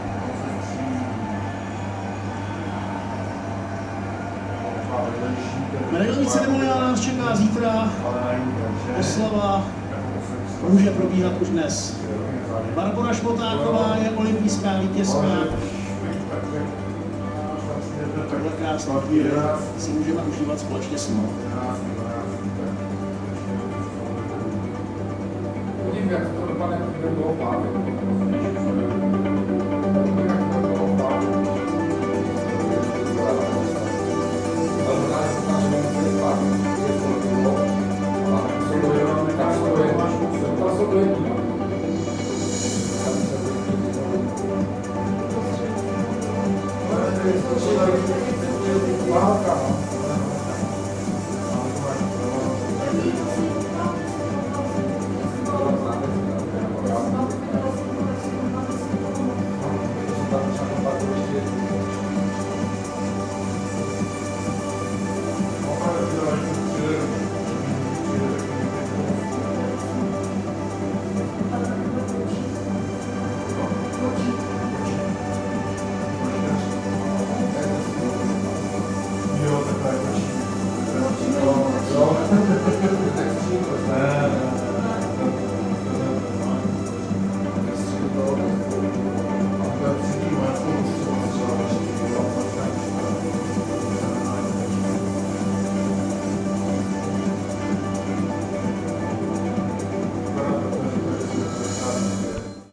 Český Krumlov, Tschechische Republik, Mario Bar, Linecká 64, 38101 Český Krumlov